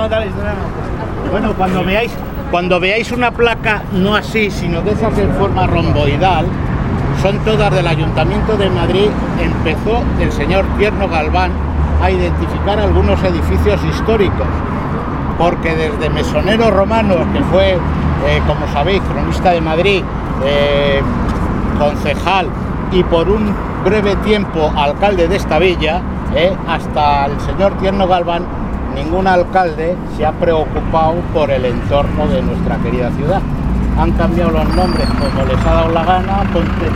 Adelfas, Madrid, Madrid, Spain - Pacífico Puente Abierto - Transecto - 05 CEIP Calvo Sotelo
Pacífico Puente Abierto - Transecto - CEIP Calvo Sotelo
7 April 2016